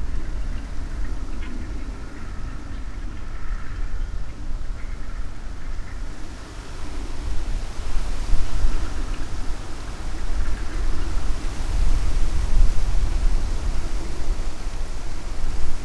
Morasko, Poligonowa road - tractor
tractor doing its thing on the field in the distance. going back and forth, dragging some kind of farming contraption. (roland r-07)